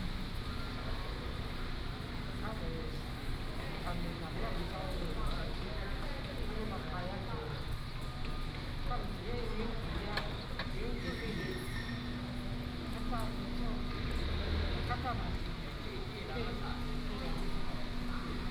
Yuanlin Station, 彰化縣員林市 - In the station hall
In the station hall